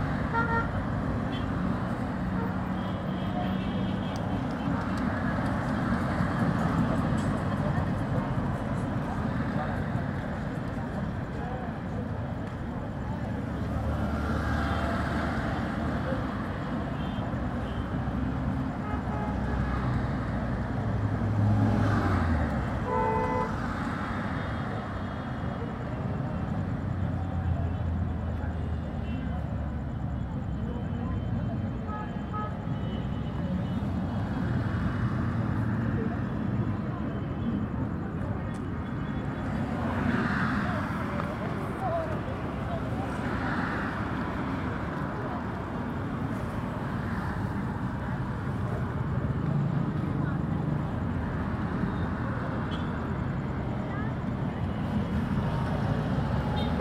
Ismailia, Qasr an Nile, Al-Qahira, Ägypten - Tahrir Square Traffic
recorded in the evening hours (circa 9.15pm). Zoom H4N with internal microphones. Traffic at the Square.
Qasr an Nile, Cairo, Egypt, 8 May 2012, 18:36